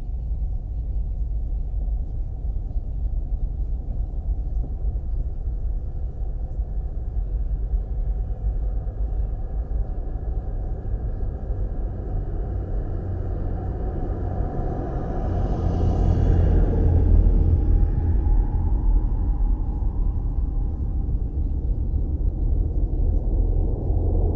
Utena, Lithuania, inside long pipe
Some building works. Long, about 50 m pipe lying at the side of a road. Drones and resonances recorded with a shotgun microphone inside the pipe.